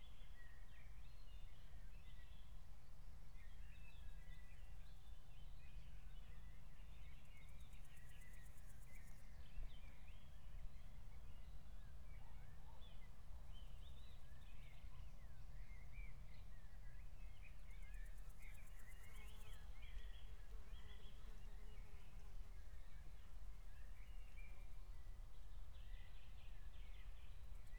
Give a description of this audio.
18:16 Berlin, Buch, Mittelbruch / Torfstich 1